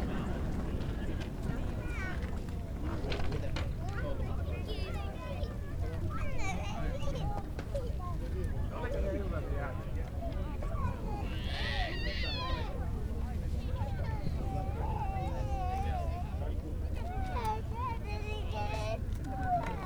Nallikari beach, Oulu, Finland - Ambiance around a ice cream stand at Nallikari beach
People hanging around a ice cream stand at Nallikari beach during the first proper summer weekend of 2020. Zoom H5 with default X/Y module.
Manner-Suomi, Suomi, 24 May 2020, ~17:00